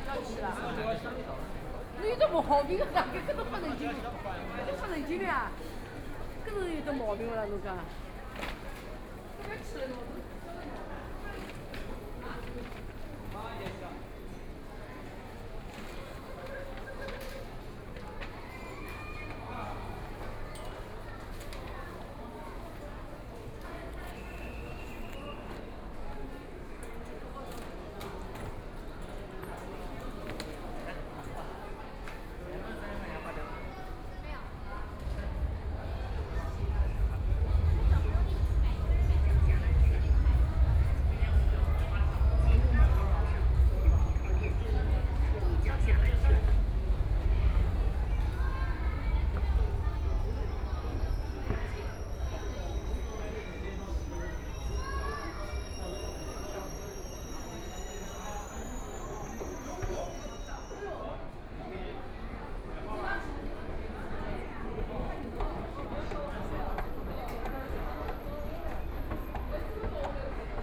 Zhongshan Park Station, Shanghai - walking into Station
From the mall to the subway station, Train stops, Voice message broadcasting station, Trains traveling through, Binaural recording, Zoom H6+ Soundman OKM II
Putuo, Shanghai, China